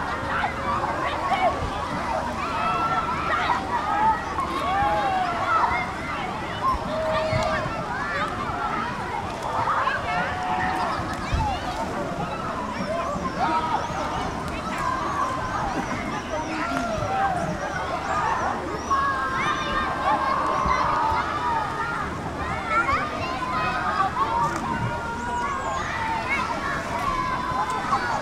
Tecklenburg: Waldfreibad - Packed Pools on a (Literal) Sunday
poolside madness, catching the last summer sunrays of the year.